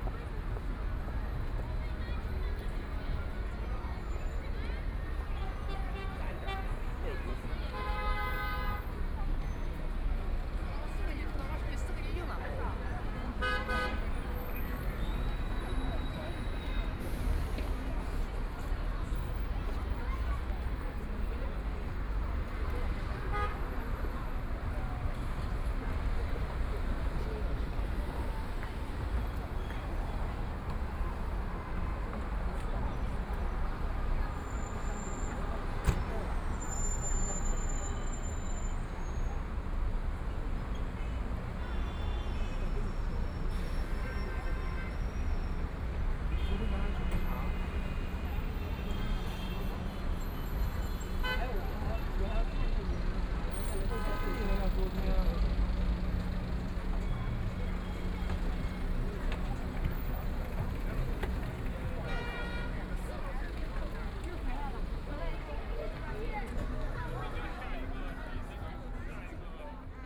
Zhaojiabang Road, Shanghai - Walking on the road
Regional department store shopping mall, Traffic Sound, Street, with moving pedestrians, Binaural recording, Zoom H6+ Soundman OKM II
Shanghai, China, 23 November